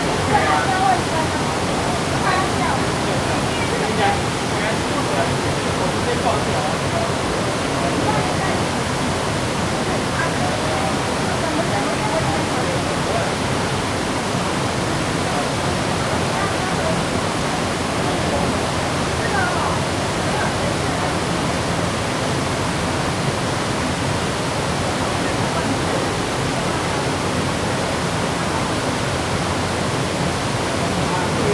Shifen Waterfall, Pingxi District, New Taipei City - waterfall
waterfall, tourist
Zoom H2n MS+ XY
New Taipei City, Taiwan